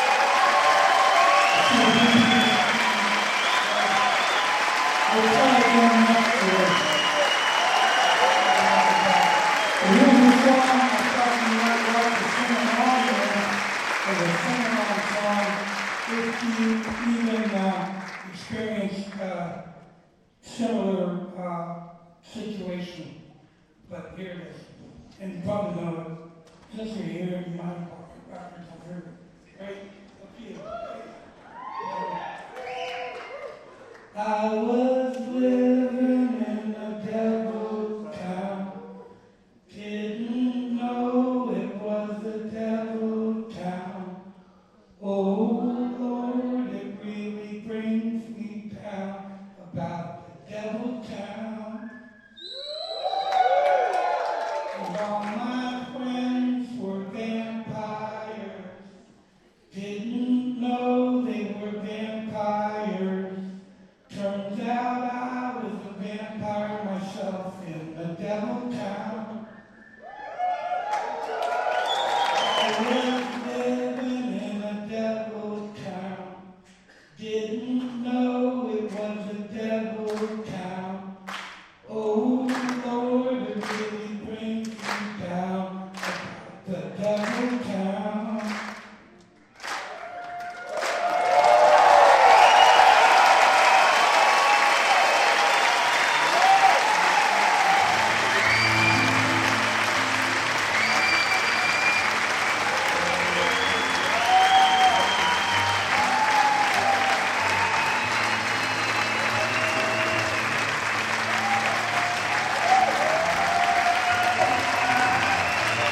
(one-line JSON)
{"title": "berlin, rosa-luxemburg-platz: volksbühne - the city, the country & me: daniel johnston performs at volksbühne", "date": "2008-11-26 19:07:00", "description": "daniel johnston performs his song \"devil town\" and gets a standing ovation\nthe city, the country & me: november 2, 2008", "latitude": "52.53", "longitude": "13.41", "altitude": "40", "timezone": "Europe/Berlin"}